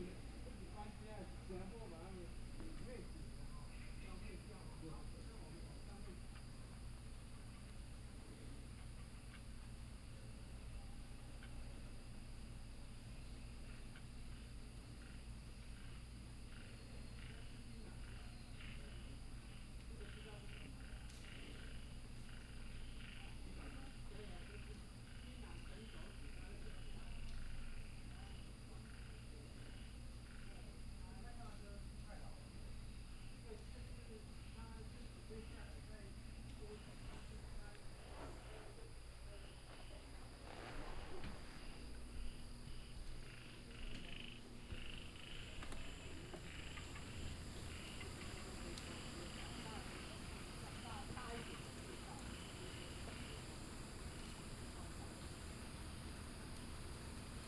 TaoMi Li., 青蛙阿婆民宿 埔里鎮 - In Bed and Breakfasts
Frog calls, In Bed and Breakfasts